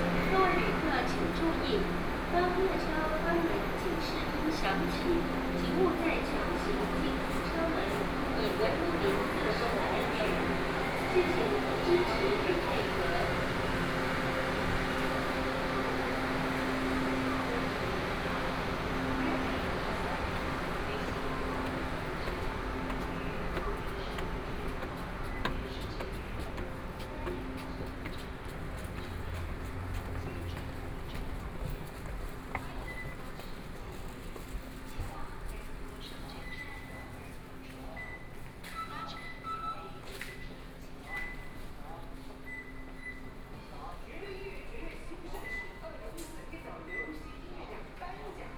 小港區正苓里, Kaohsiung City - Red Line (KMRT)
from Kaohsiung International Airport station to Siaogang station